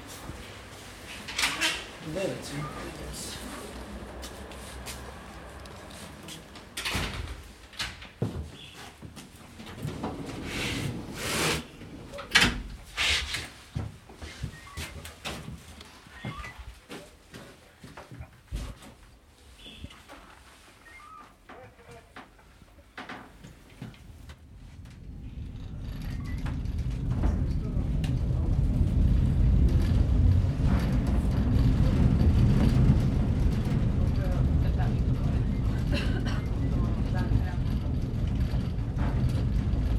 sounds at the entrance, drive up, sounds after arrival